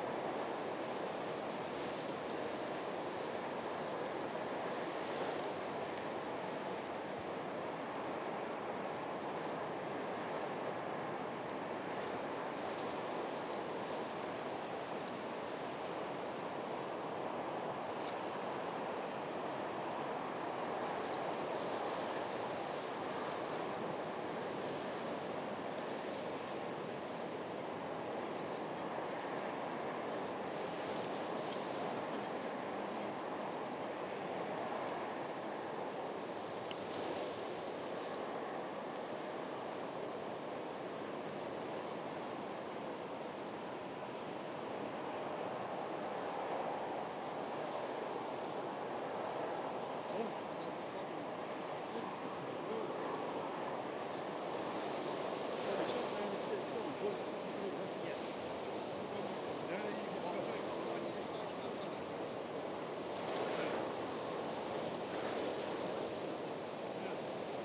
Tottenham Marshes, London - The Beginnings of Storm Katie
The shotgun microphone was placed close to the ground, facing towards the River Lea Navigation inside a blimp. The sound of aircraft, trains and traffic were particular dominant but also hidden beneath the city noises was the rustling and squeaking of straw. I tried to capture the effect of the wind by placing it closer to the ground and plants.